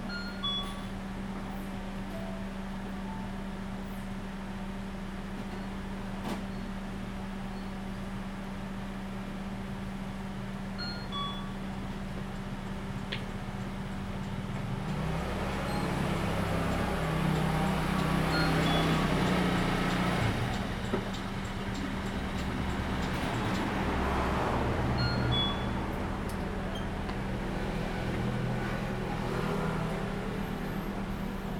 金崙村, Taimali Township - Small village
In front of the convenience store, Hot weather, Traffic Sound, Small village
Zoom H2n MS+XY